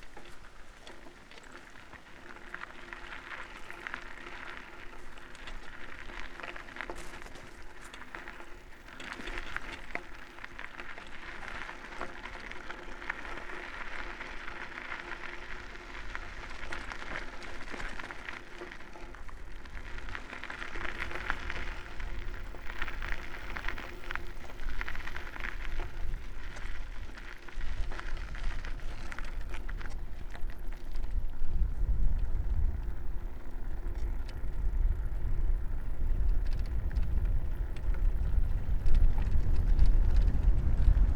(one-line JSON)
{"title": "Medeniai, Lithuania, biking", "date": "2013-07-07 14:40:00", "description": "biking in the wind", "latitude": "55.50", "longitude": "25.68", "altitude": "163", "timezone": "Europe/Vilnius"}